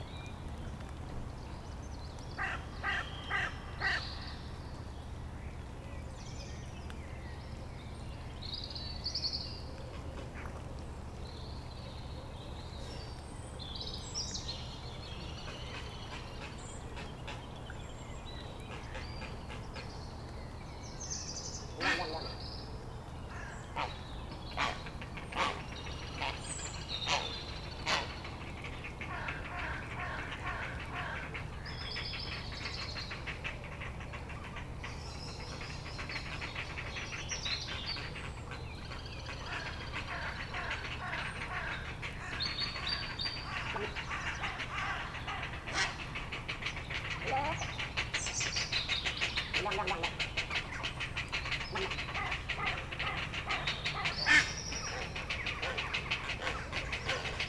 {"title": "Atlantic Pond, Ballintemple, Cork, Ireland - Heron Island: Dusk", "date": "2020-04-26 20:55:00", "description": "Lots of birds, including a Robin, Ducks, Blackbirds, Little Grebe, Little Egret, Crows, Heron chicks making a ruckus, and some fantastic adult Heron shrieks. A pair of Swans glide past.\nRecorded on a Roland R-07.", "latitude": "51.90", "longitude": "-8.43", "altitude": "3", "timezone": "Europe/Dublin"}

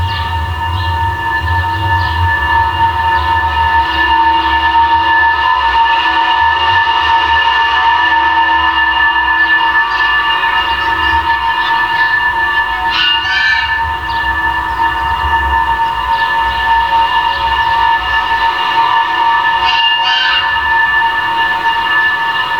{"title": "Villagonzalo Pedernales, Burgos, Spain - Bridge 001. Para puente, micrófonos de contacto, y viento", "date": "2015-03-19 17:35:00", "description": "Contact microphones, a traffic bridge over a railway, and more wind you can shake a stick at. Listening to, and thinking about, the resonance of this other, inacessible space of sound induction.", "latitude": "42.30", "longitude": "-3.73", "altitude": "906", "timezone": "Europe/Madrid"}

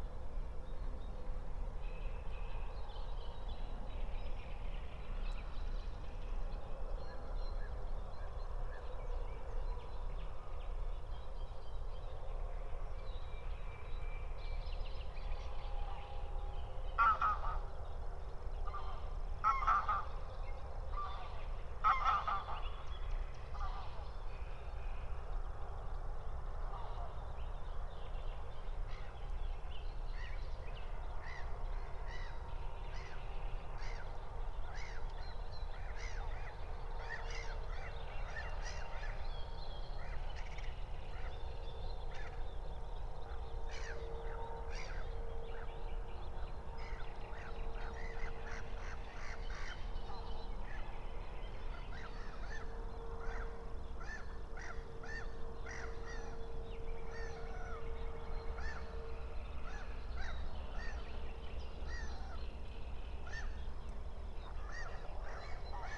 {"date": "2022-05-30 02:00:00", "description": "02:00 Berlin, Buch, Moorlinse - pond, wetland ambience", "latitude": "52.63", "longitude": "13.49", "altitude": "51", "timezone": "Europe/Berlin"}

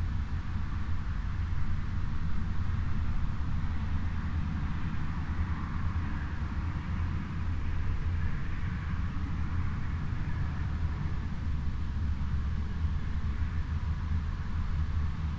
{"title": "hoscheid, sound sculpture, lauschinsel", "date": "2011-06-02 15:34:00", "description": "A Part of the Hoscheid Klangwanderweg - sentier sonore is a sound sculpture entitled Lauschinsel. Here the listener can lay down on his back, place his head in between two wooden tubes and listen to the local ambience - here recorded without the new headphone application.\nmore informations about the Hoscheid Klangwanderweg can be found here:\nProjekt - Klangraum Our - topographic field recordings, sound sculptures and social ambiences", "latitude": "49.95", "longitude": "6.08", "altitude": "493", "timezone": "Europe/Luxembourg"}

